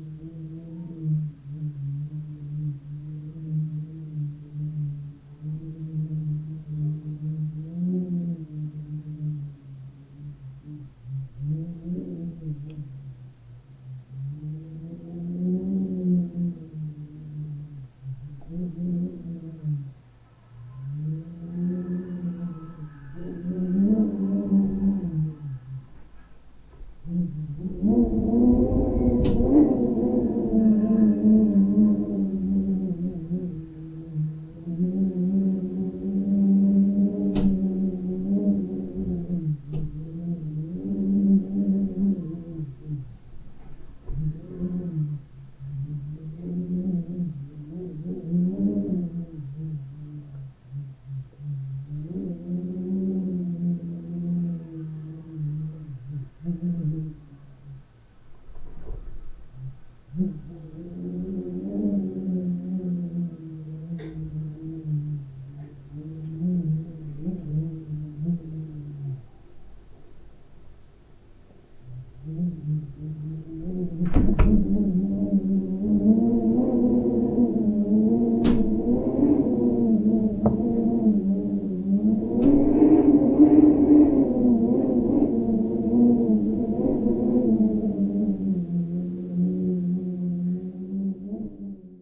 Mateckiego street, corridor - wind through sliding door slit
wind gushing through a small slit. rumble of a window in the room. some sound of construction nearby. (roland r-07)
Poznań, Poland, 14 May 2019